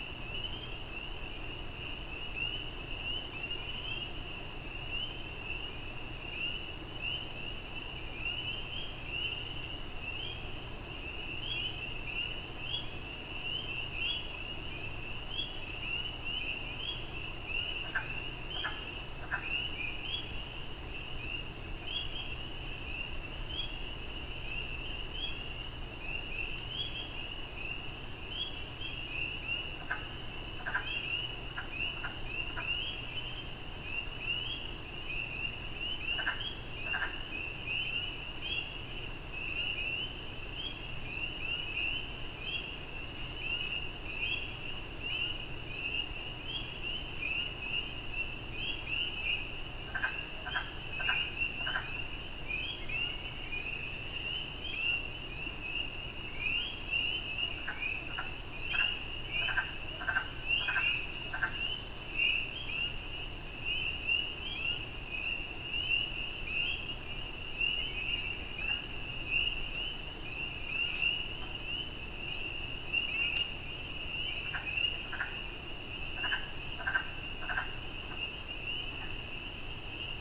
Sring Peepers, Tree Frogs and Loons recorded at 3:30 am on balcony of the inn at Warbler's Roost. Sounds recorded approximately 500 feet from Commanda Creak and 1000 feet from Deer Lake. Sound recording made during the Reveil broadcast of dawn chorus soundscapes for 2020. Recorded with pair of DPA 4060 microphones in a boundary configuration.
Commanda, ON, Canada, 2 May 2020, 03:30